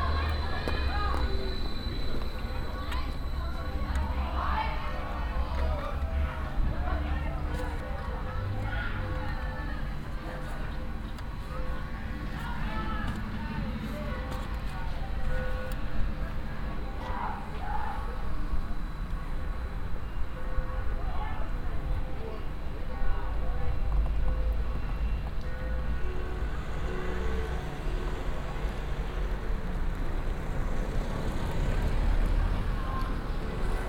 unna, bahnhofstraße, bells on noon
near the main station on a small place, rare traffic passing by, some car doors being closed, the church bells in the distance
soundmap nrw - social ambiences and topographic field recordings